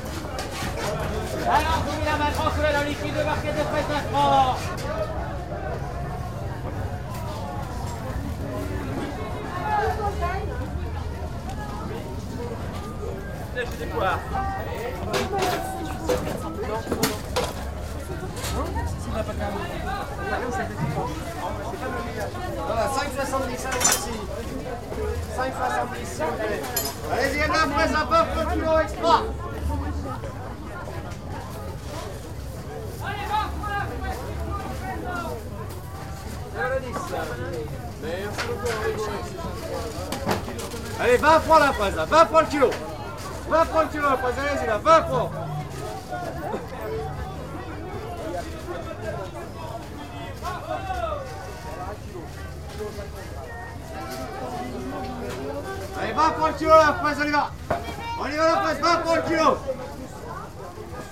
paris, versailles, market
vendors calling on busy market place in the morning time
international cityscapes - topographic field recordings and social ambiences
12 December 2009